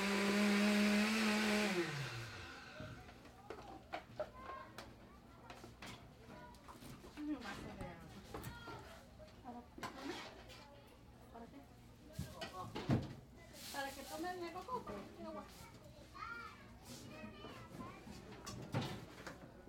{
  "title": "Casa Hogar Hijos de la LUNA, Oaxaca, Mexico - Cocina",
  "date": "2012-11-29 11:42:00",
  "description": "Cocinando para los hijos de la luna",
  "latitude": "17.08",
  "longitude": "-96.74",
  "altitude": "1568",
  "timezone": "America/Mexico_City"
}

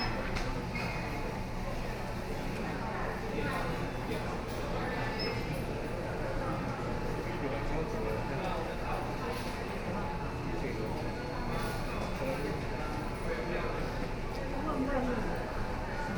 {
  "title": "Hualien Station, Taiwan - In the station hall",
  "date": "2013-11-05 15:58:00",
  "description": "In the station hall, Binaural recordings, Sony PCM D50 + Soundman OKM II",
  "latitude": "23.99",
  "longitude": "121.60",
  "altitude": "14",
  "timezone": "Asia/Taipei"
}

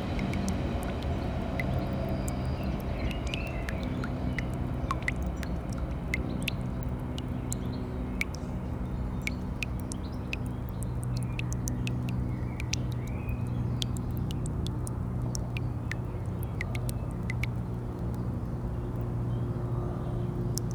{
  "title": "Friedhof Grunewald, Bornstedter Straße, Berlin, Germany - Grunewald Cemetery - quietly dripping tap",
  "date": "2014-06-15 13:37:00",
  "description": "Sunny weather. The cemetery is very carefully looked after. Sunday activity is cleaning the paths and watering the plants from one of many taps. The one or two unkempt graves with waist high weeds are so out of place that I found myself troubled and wondering why. What family or friendship history was implied? What had happened to keep them away?",
  "latitude": "52.50",
  "longitude": "13.29",
  "altitude": "42",
  "timezone": "Europe/Berlin"
}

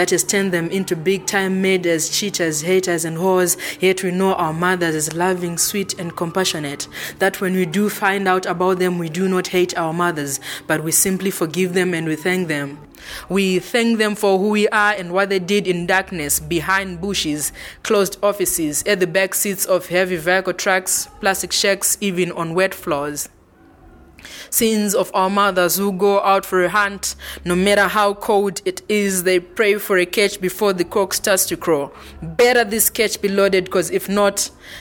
{
  "title": "The office of the Book Cafe, Harare, Zimbabwe - Linda Gabriel, “Sins of our Mothers…”",
  "date": "2012-10-13 17:04:00",
  "description": "Linda Gabriel, “Sins of our Mothers…”\nfor these recordings, we decided to move to the large backyard office at Book Cafe. Evenings performances picked up by then and Isobel's small accountant office a little too rich of ambience ....\nsome were broadcast in Petronella’s “Soul Tuesday” Joy FM Lusaka on 5 Dec 2012:",
  "latitude": "-17.83",
  "longitude": "31.06",
  "altitude": "1489",
  "timezone": "Africa/Harare"
}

